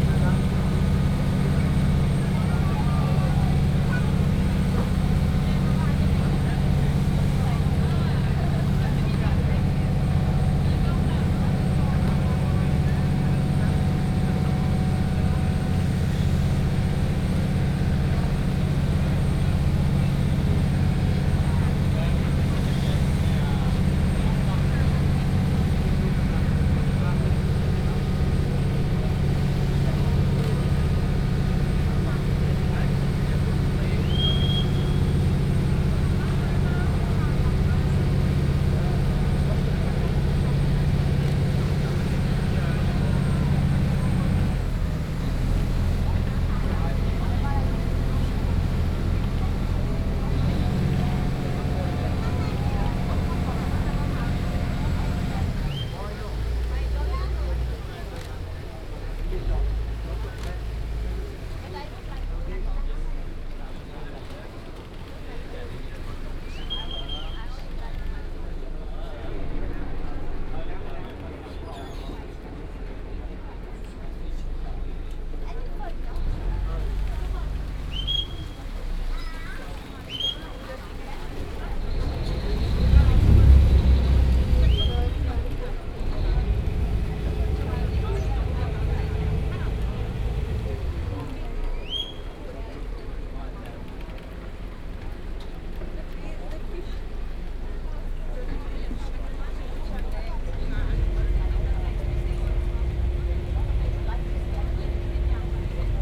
A boat bus running along the river in Bangkok. Theres people, the motor and an occasional whistling which was produced by the boats co-sailor, signaling the captain how close he is to the next landing stage, whether the rope has been fixed or losened, and whether the boat is ready to take off again -- a very elaborate whistling technique.